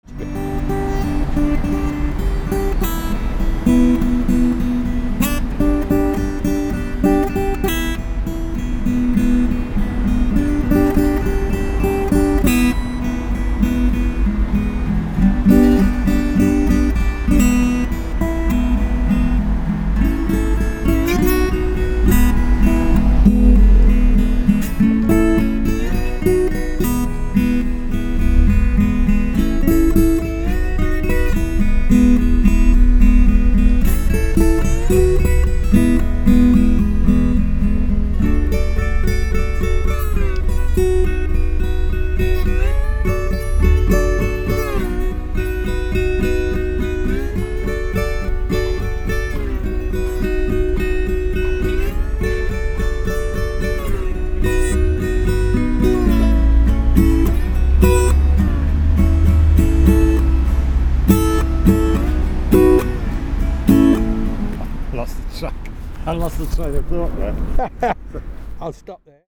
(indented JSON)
{
  "title": "Guitarist, Malvern, Worcestershire, UK - Guitarist",
  "date": "2019-07-04 13:31:00",
  "description": "I bumped into this guitarist purely by chance playing simply for his own enjoyment. I like the quiet intimacy of this clip with the busy town centre traffic in the background.",
  "latitude": "52.11",
  "longitude": "-2.33",
  "altitude": "150",
  "timezone": "Europe/London"
}